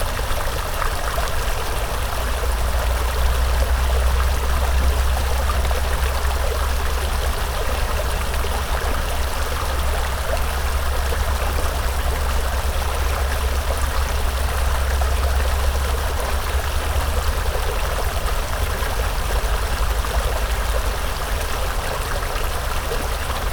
Birkdale St, Los Angeles, CA, USA - Taylor Yard Bridge Construction
Recording captured along the west bank of the LA River as construction of the Taylor Yard Bridge begins for the morning.
Los Angeles County, California, United States of America